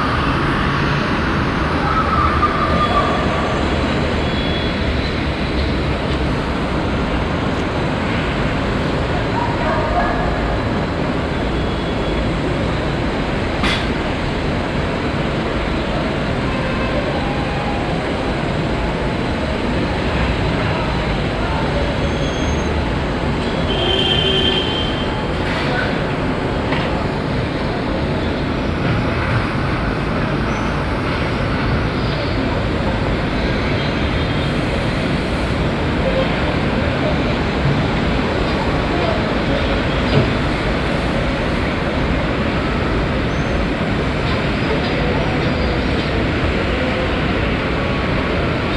Ville Nouvelle, Tunis, Tunesien - tunis, hotel roof, city night ambience
Standing on the hotel roof facing the building- and streetscape at night. The sound of the city.
international city scapes - social ambiences and topographic field recordings
4 May, 21:30